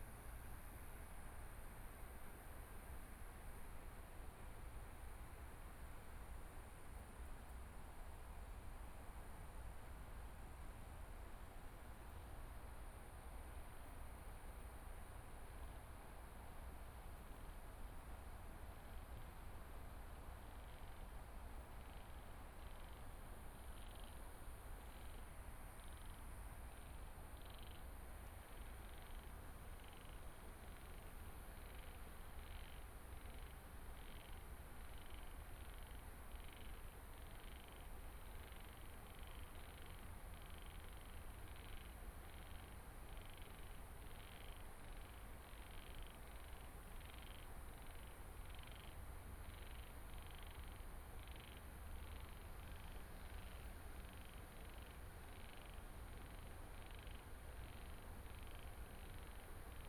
中山區大佳里, Taipei City - Insects
Bushes near the airport at night, Insects
Binaural recordings, Please turn up the volume a little
Zoom H4n+ Soundman OKM II